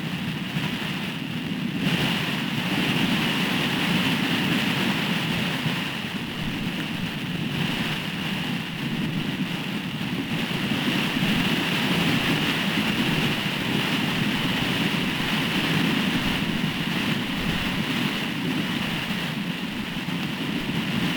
fine weather coming in from the West. Stiff breeze and a great sunset. Down on the beach a very exciting art installation was taking place as the breeze shaped and reshaped the sand into extraordinary designs. I put 2 contact microphones with the plates facing the oncoming sand and here for your delight a delectation is the soundtrack to the West Wind installation.
We're here courtesy of the Wildlife Sound Recording Society and their knowledge, enthusiasm and willingness to share skills and techniques with a sound faffer has been great.
21 May 2018, 20:38